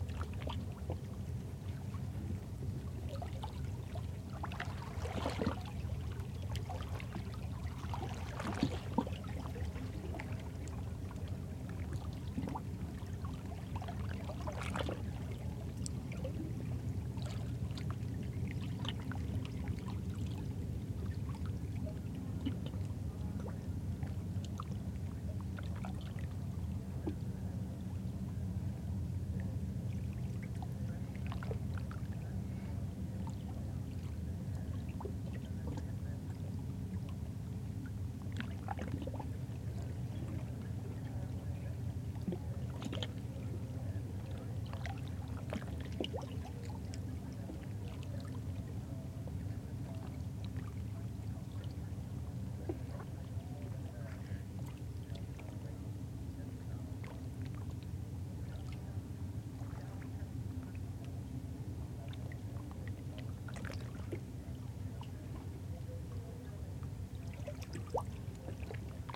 Audible signal Hurtigruten ship - Kirkenes, Norway - Audible signal Hurtigruten ship
Audible signal Hurtigruten ship.
Звуковой сигнал круизного лайнера компании Hurtigruten. Заходя в порт, судно подаёт звуковой сигнал, который громогласным эхом разноситься над фьордом. Это длинная запись (более 17 мин), начинается со звукового сигнала судна, затем идёт лёгкий плеск волн. Примерно на 5-й минуте до берега доходят большие волны от судна «Хуртигрутен». В течении 10 минут на берег накатывают волны, и к концу записи снова воцаряется штиль.